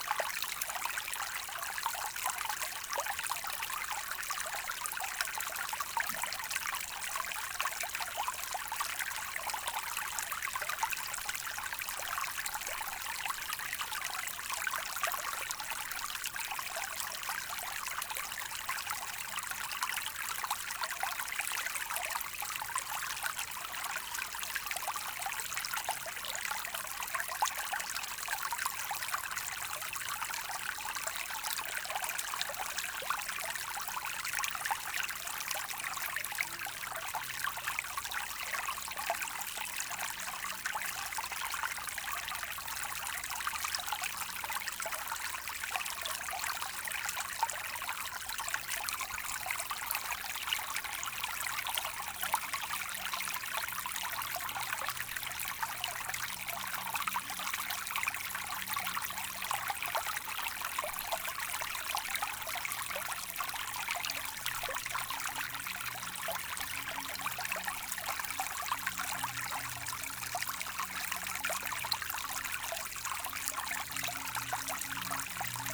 Chanceaux, France - Seine river
A few kilometers after the spring, after being a stream, now the Seine is a very small river, flowing gaily in the pastures.
29 July 2017, 17:30